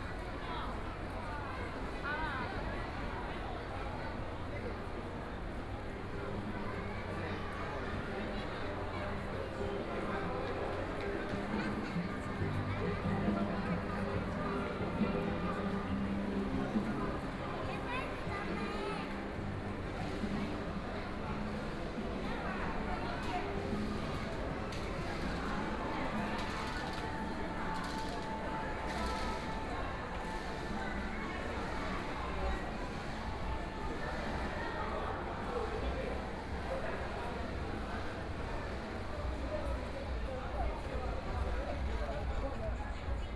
Taipei EXPO Park, Taiwan - Soundwalk
Walking through the bazaar, Various shops voices, Binaural recordings, Zoom H4n+ Soundman OKM II